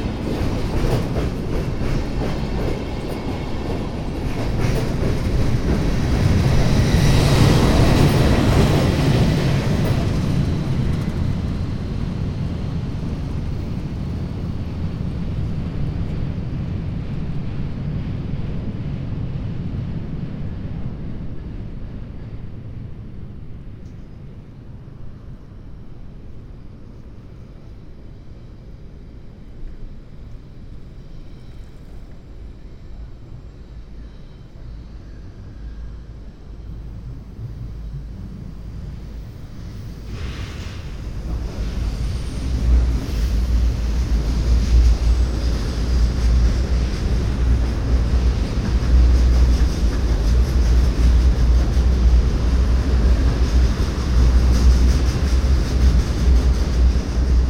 cologne. hohenzollernbrücke, trains - cologne, hohenzollernbrücke, trains 01
trains passing the iron rhine bridge in the afternoon. no1 of 3 recordings
soundmap nrw - social ambiences and topographic field recordings